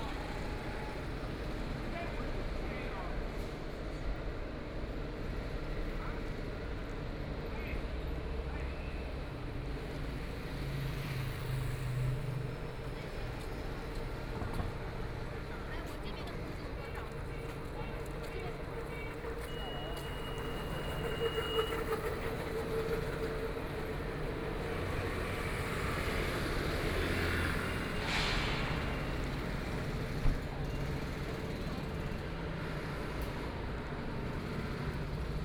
Huqiu Road, Shanghai - In the Street
walking in the Street, Binaural recording, Zoom H6+ Soundman OKM II
Shanghai, China, December 2013